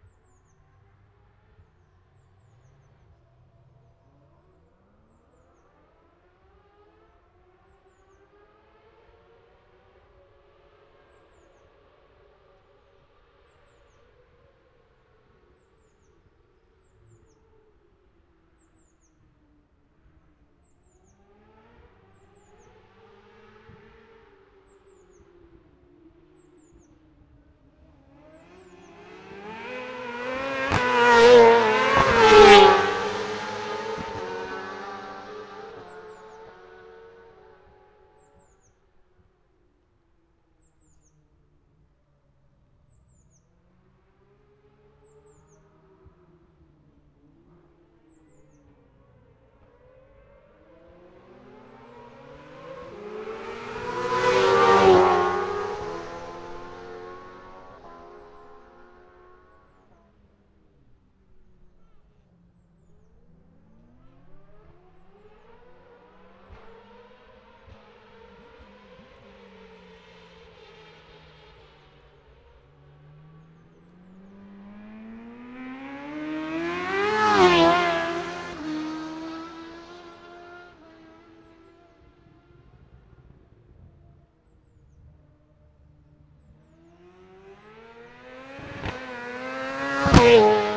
Scarborough, UK - motorcycle road racing 2012 ...
Sidecar practice ... Ian Watson Spring Cup ... Olivers Mount ... Scarborough ... binaural dummy head ... grey breezy day ...
15 April 2012, 09:44